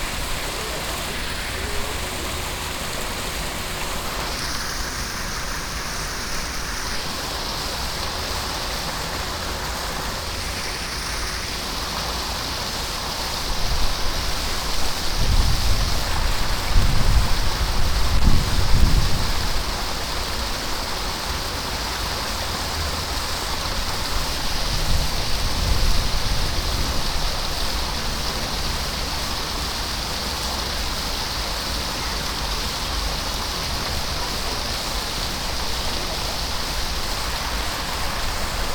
Nahe der Domkirche. Die Kaskade eines Brunnens auf drei Ebenen. Im Hintergrund die Geräusche eines Kindes das Verstecken mit seinem, Vater spielt.
A cascade of a 3 level fountain close to the church. In the distance a child playing hide and seek with his father. Recorded on a slight windy day in the early afternoon.
Projekt - Stadtklang//: Hörorte - topographic field recordings and social ambiences
Essen, Germany